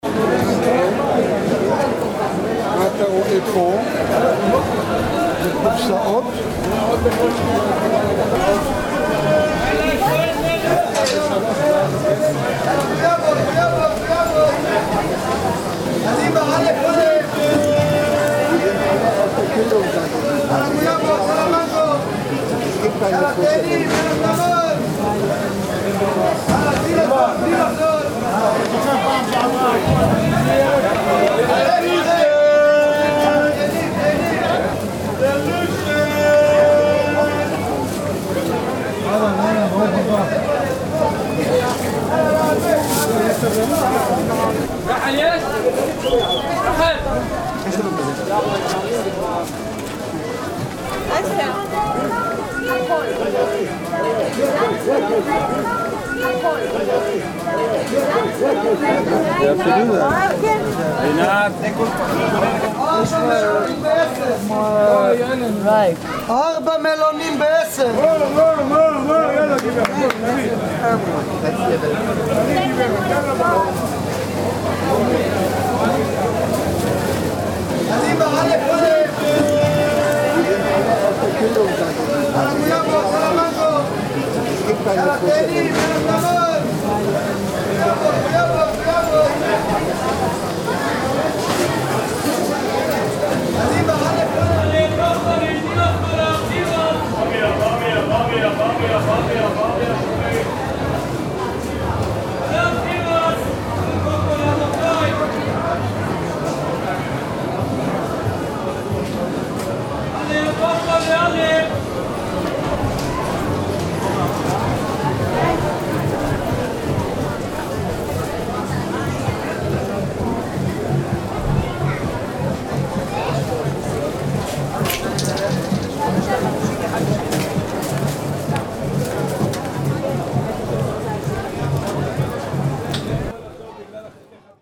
Тель-Авив, Израиль - Carmel Market
a walk through Carmel Market during day time